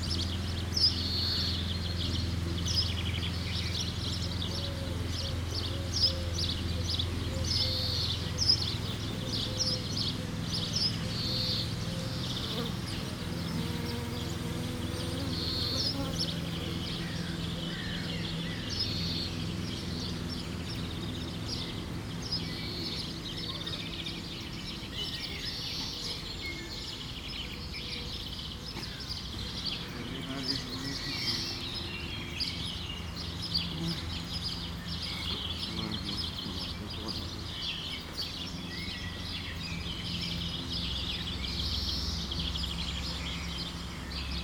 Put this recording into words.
What is the typical sound of a french rural landcape ? Immediatly, I think about a small Burgundy village. It's probably an Épinal print, but no matter. Beyond the stereotype, for me it's above all sparrows, Eurasian collared doves and if summer, a lot of Common Swifts shouting in the sky. Also, it's distant bells, old mobylettes and cycles bells. As countryside, it's often very nag, I let the 4 minutes of mower at the beginning. At the end of the recording, a boat called Adrienne is passing by on the Burgundy canal. The bridge is very small for the boat, thus craft is going extremely slowly.